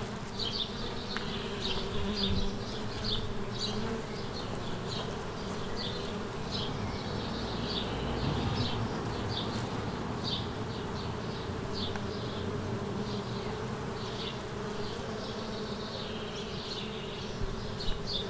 Bd Pierpont Morgan, Aix-les-Bains, France - L'olivier
Abeilles butinant sur un olivier, merles .....